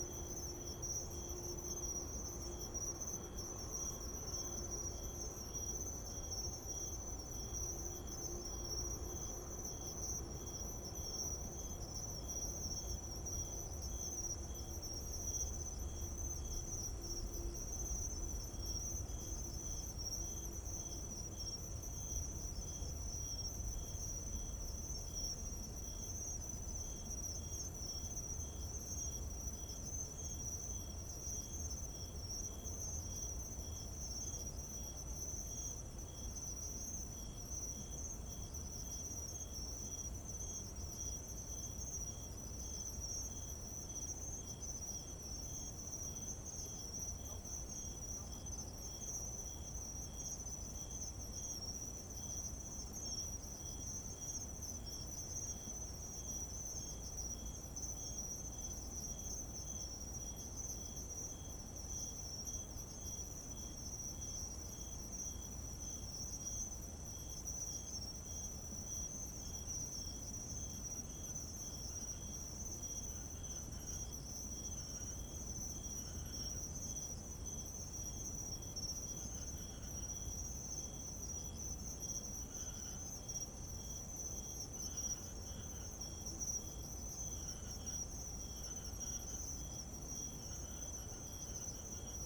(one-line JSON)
{"title": "Zhongxing Rd., Guanyin Dist., Taoyuan City - Insects sound", "date": "2017-09-20 23:35:00", "description": "Late night street, Grass, Insects, Zoom H2n MS+XY", "latitude": "25.04", "longitude": "121.08", "altitude": "12", "timezone": "Asia/Taipei"}